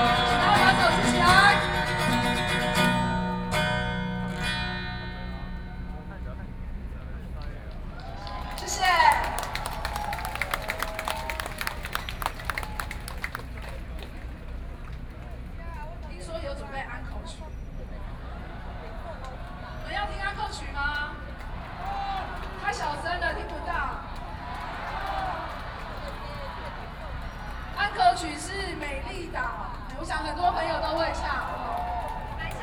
Ketagalan Boulevard, Taipei City - Protest
Creators of art and culture in Taiwan, Participation in protests, Sony PCM D50 + Soundman OKM II
Taipei City, Taiwan, 18 August 2013, 21:38